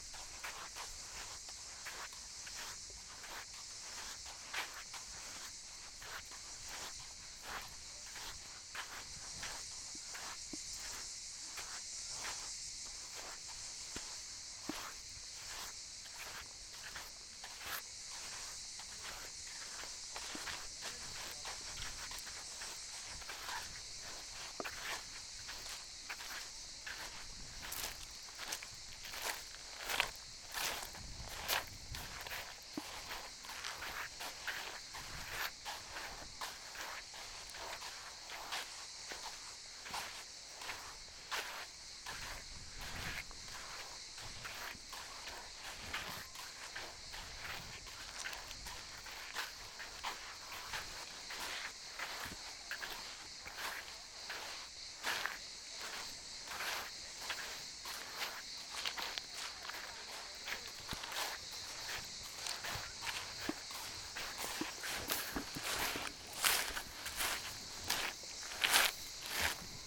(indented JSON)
{
  "title": "Ulupınar Mahallesi, Çıralı Yolu, Kemer/Antalya, Turkey - Walking to the shore",
  "date": "2017-07-25 17:20:00",
  "description": "walking to the sea shore in the afternoon, sounds of waves, stones and people",
  "latitude": "36.40",
  "longitude": "30.48",
  "altitude": "6",
  "timezone": "Europe/Istanbul"
}